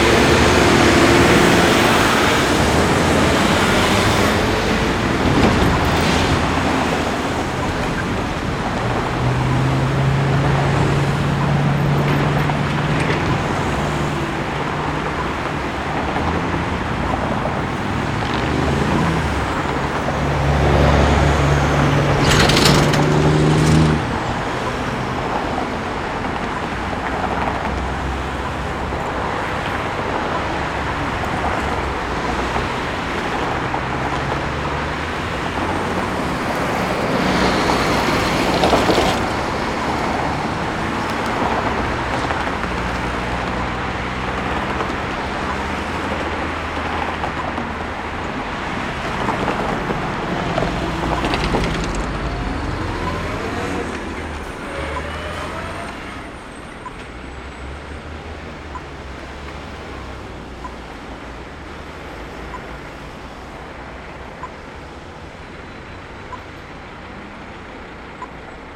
Corner of Alexandra Parade and Nicholson St - Part 4 of peculiar places exhibition by Urban Initiatives; landscape architects and urban design consultants
urban initiatives, landscape architecture, peculiar places
19 August 2010, ~10:00